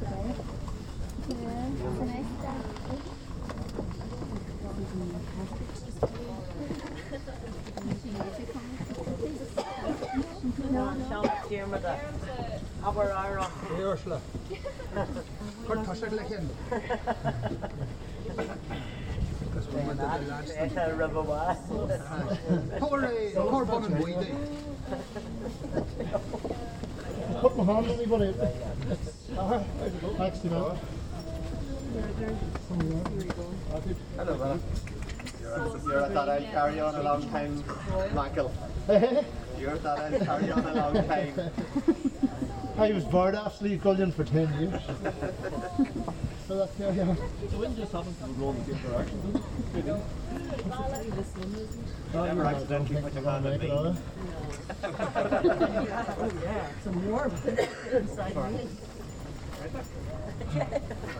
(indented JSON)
{
  "title": "Newry and Mourne, UK - Slieve Gullion - South Cairn Tomb - Winter Solstace",
  "date": "2013-12-22 01:03:00",
  "description": "Recorded onto a Marantz PMD661 using its shitty internal mics.",
  "latitude": "54.12",
  "longitude": "-6.43",
  "altitude": "565",
  "timezone": "Europe/London"
}